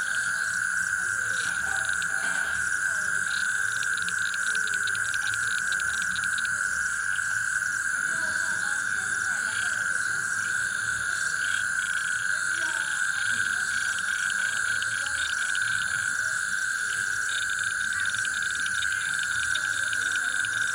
Trom Residence, Ghana - Swamp Chorus, Trom, Ghana.
Binaural Swamp Chorus recorded in a suburb of Koforidua, Ghana in September, 2021.
In the Soundscape:
Human voices in the background.
Unidentified species of toads and frogs in distinctive immersive fields.
The space has reverberant qualities.
Field Recording Gear: Soundman OKM Binaural set with XLR Adapter, ZOOM F4 Field Recorder.
Eastern Region, Ghana, 8 September 2021, ~21:00